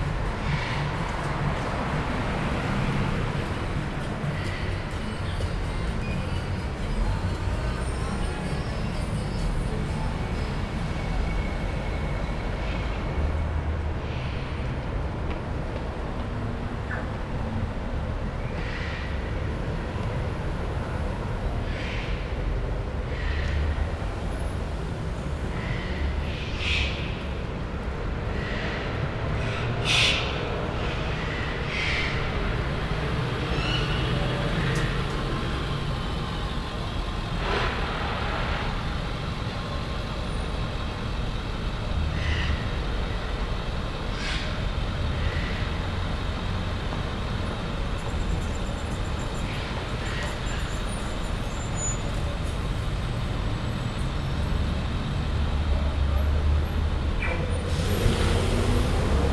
neoscenes: Broadway Center garage entrance